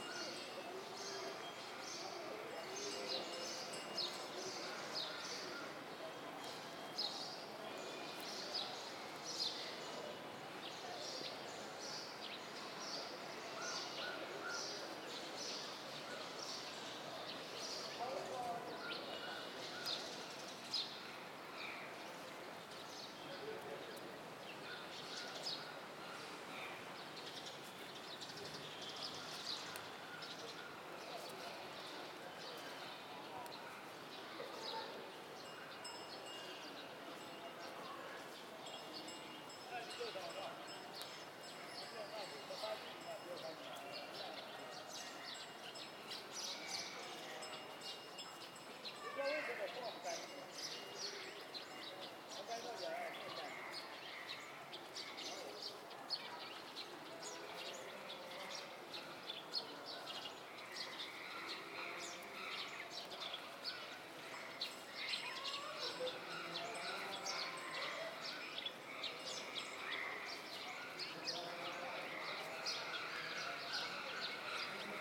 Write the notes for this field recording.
ein daw yar pagoda mandalay. birma.